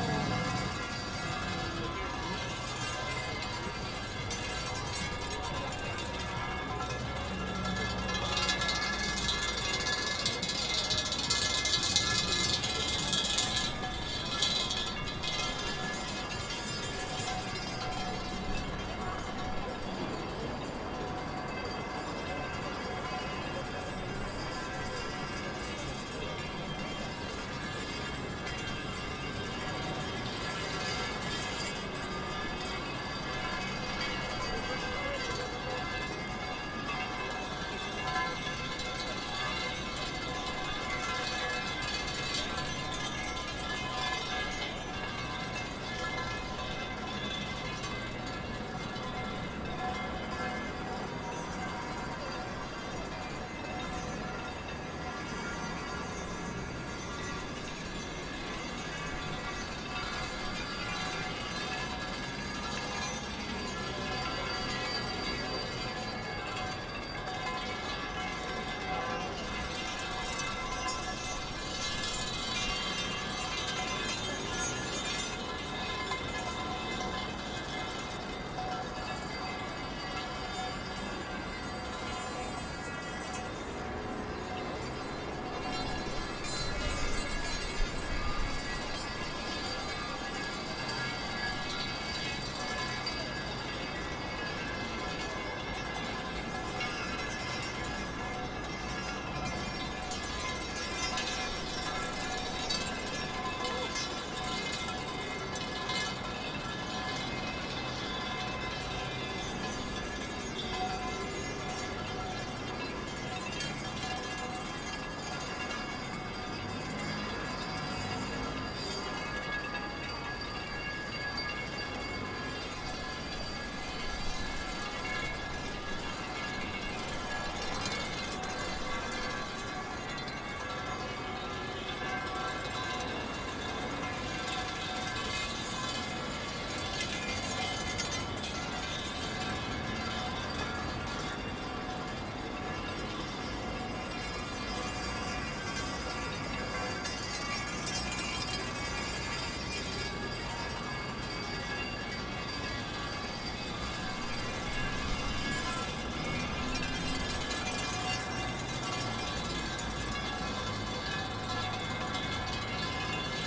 Bout du quai rive neuve, Marseille, France - laisser trainer partie1
pour cinq tuyaux de cuivre trouvés dans les rues
pour cinq marcheurs / traineurs jusqu’au tournis
cinq cercles tracés à la craie, sur ce damier en friche
pour un enregistreur numérique au centre des cercles
pour la semaine FESTIVAL à Marseille
avec par ordre de tuyau; r.g, v.h, v.c, a-l.s, e.v
May 2013